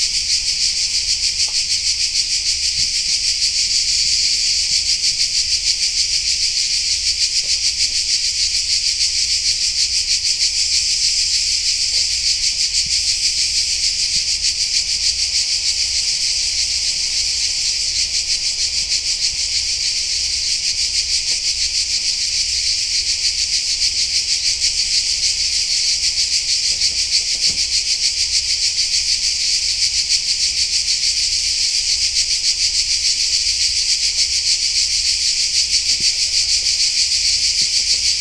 Marangunićevo šetalište, Split, Croatie - Crickets in Split
Crickets in Split, Croatia, Zoom H6
Splitsko-dalmatinska županija, Hrvatska, 24 July